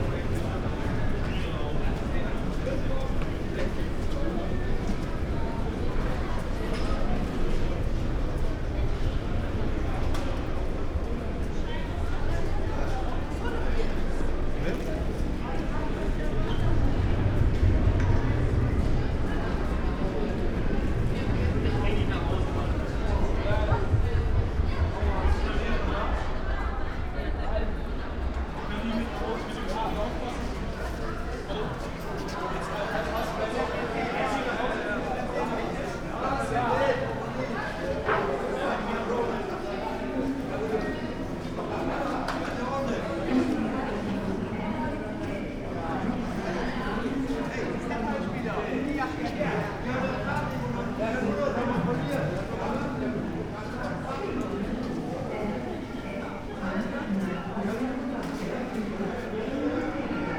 Bremen, Hauptbahnhof, main station - station walk
walking through Hauptbahnhof Bremen
(Sony PCM D50, Primo EM172)
Bremen, Germany